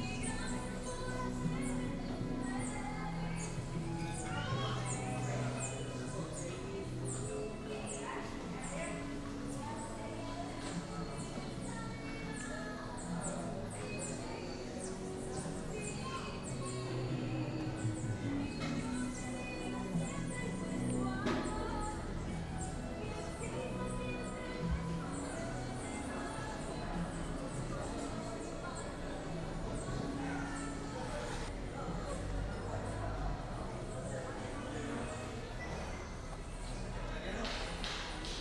Sound-walk through Apulo's streets. The recording was taken the morning after the local feasts and a hangover silence or a tense stillness can be perceived on the audio file. The journey begins on a small shop, take us across a couple of commercial streets and finally arrives to the marketplace.
Apulo, Cundinamarca, Colombia, 6 January 2013, 12:00